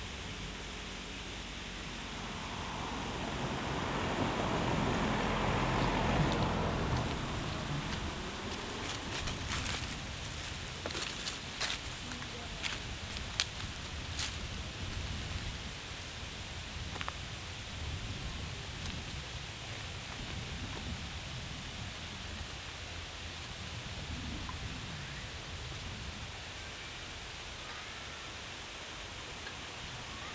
Lazaretto, italian-slowenian border - wind in trees, afternoon ambience
afternoon at the border between Slovenia and Italy, near Lazaretto. Not much happens.
(SD702, Audio Technica BP4025)
6 September 2013, ~14:00